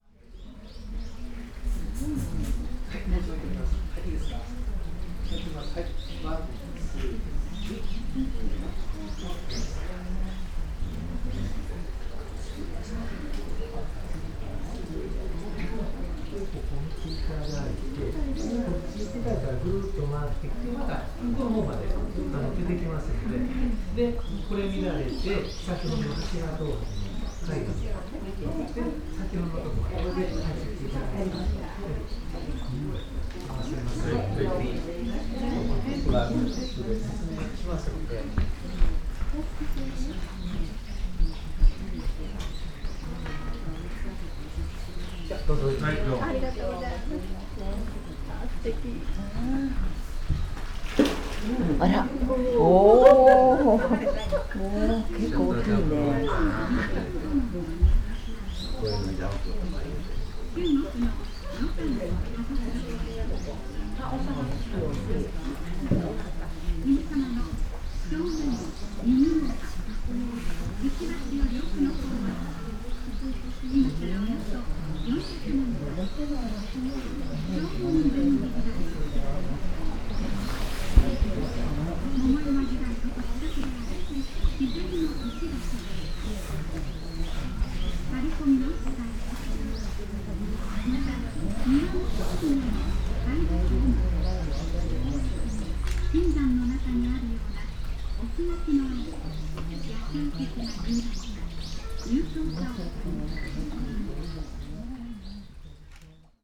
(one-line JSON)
{"title": "watching room, Chishakuin garden, Kyoto - fish", "date": "2014-11-01 11:14:00", "description": "gardens sonority, voices\npale green waters with no reflection\nblue fish\nold voices, laughing", "latitude": "34.99", "longitude": "135.78", "altitude": "63", "timezone": "Asia/Tokyo"}